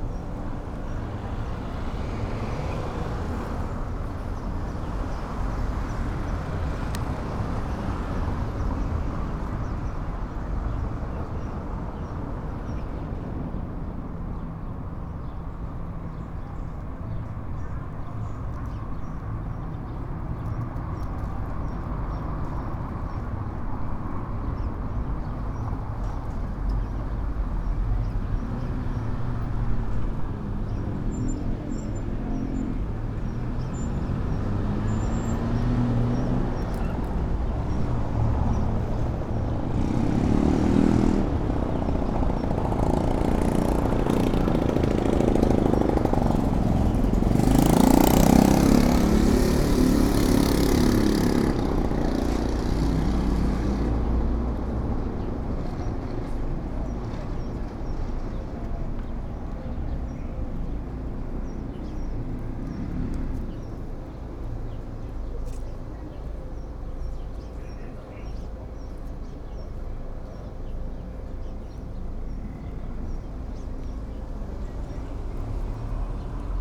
Expiatorio Plaza during the COVID-19 quarantine on the first day of phase 3.
This is a plaza where there is normally a lot of flow of people going by, but now there are very few people due to the quarantine that is lived at this time by the pandemic.
(I stopped to record while going for some medicine.)
I made this recording on April 21st, 2020, at 2:26 p.m.
I used a Tascam DR-05X with its built-in microphones and a Tascam WS-11 windshield.
Original Recording:
Type: Stereo
Esta es una plaza donde normalmente hay mucho flujo de gente pasando, pero ahora hay muy pocas personas debido a la cuarentena que se vive en este tiempo por la pandemia.
(Me detuve a grabar al ir por unas medicinas.)
Esta grabación la hice el 21 de abril 2020 a las 14:26 horas.
Guanajuato, México